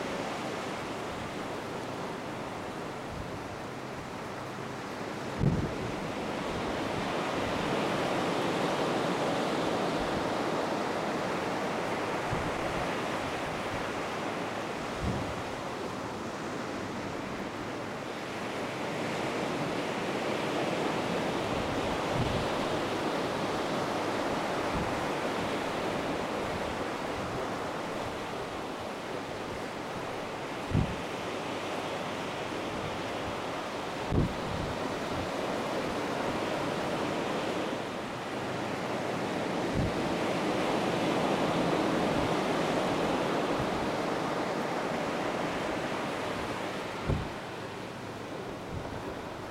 Talara Province, Peru - Mancura Shore Line
I recorded this ambiance on my last family trip to Mancura. I was alone on the beach with my recorder looking at the waning moon on the horizon. it was one of the most peaceful 4 and a half minutes of my life. it was also the last trip that my brother in law's father was able to make before losing his battle with cancer. I listen to this recording from time to time to remember that no matter how turbulent life might get you can always find peace and tranquility. RIP George Evans. We'll miss you
9 June 2015